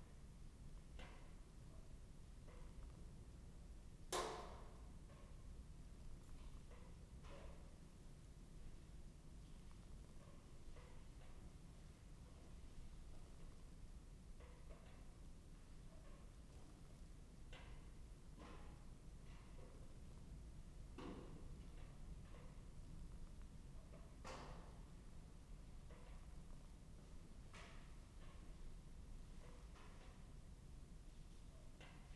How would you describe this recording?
inside the church of St Mary the Virgin ... Lindisfarne ... lavalier mics clipped to sandwich box ... background noise ...